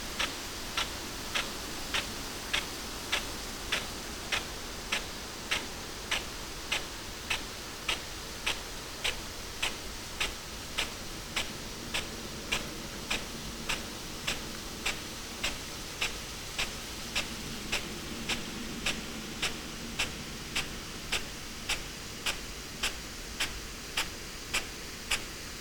Malton, UK - potato irrigation ...
potato irrigation ... bauer rainstar e41 with irrigation sprinkler ... xlr sass on tripod to zoom h5 ... absolutely love it ...
England, United Kingdom, 16 July 2022, 06:05